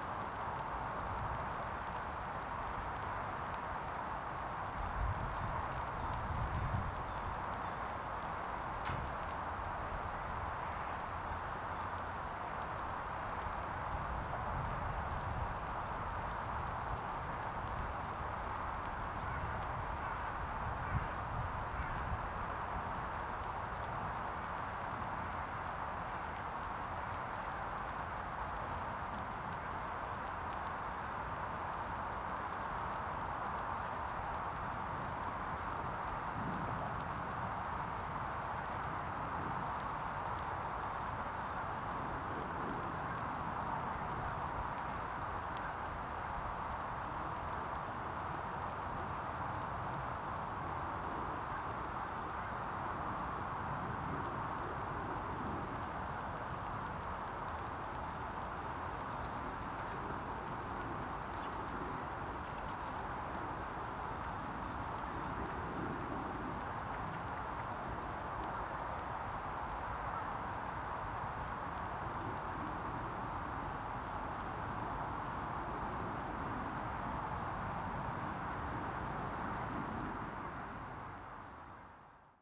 Berlin Buch, Deutschland - electric buzz
electric crackling from newly build 380kV high voltage power line, passing-by train
(Sony PCM D50)
26 January, 13:54